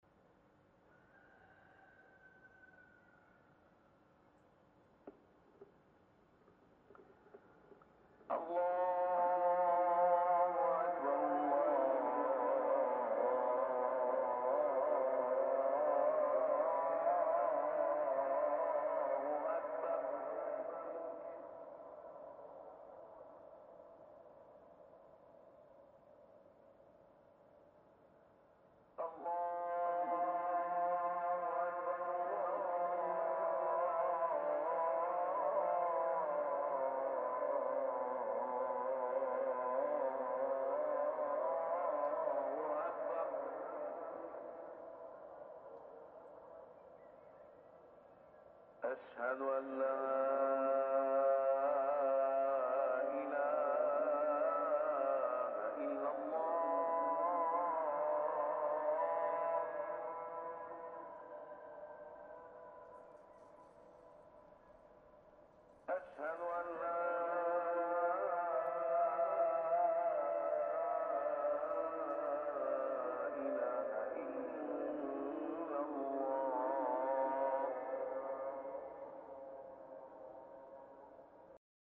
naplouse - apel à la prière
pendant le tournage de l'attentat film de ziad doueri
אזור יהודה והשומרון